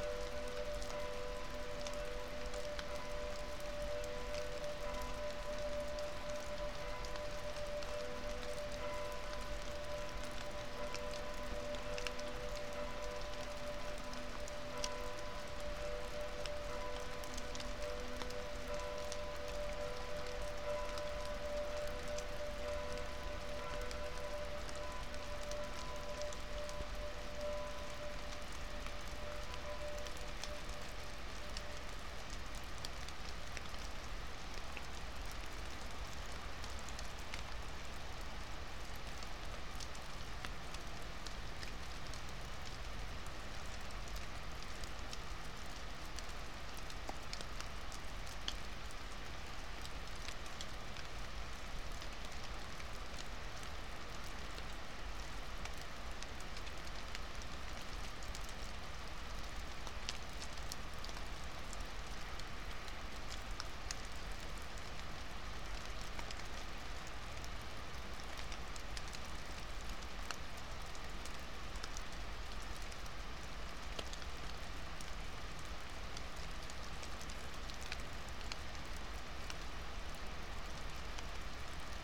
Hausbergstraße, Reit, Deutschland - evensong warm winter
Rain, melting snow and evensong ringing
Bayern, Deutschland, 26 December 2020